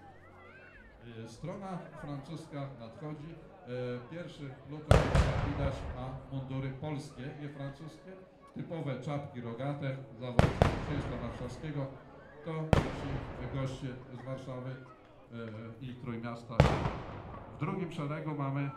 Lidzbark Warmiński, Bishops Castle, Battle - Napoleon's battle (part 1)
The biggest battle of Napoleon's east campaigne which took place in Warmia region (former East Preussia).
Lidzbark Warmiński, Poland, 7 June 2014